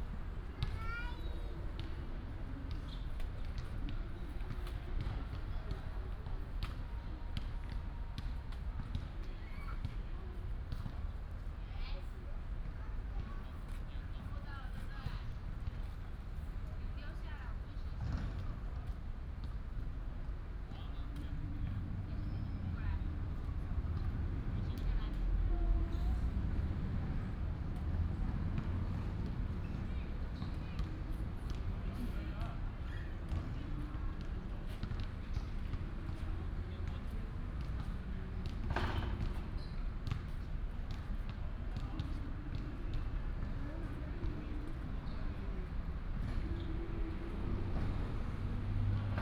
空軍一村, Hsinchu City - small Park
in the small Park, Childrens play area, small basketball court, Binaural recordings, Sony PCM D100+ Soundman OKM II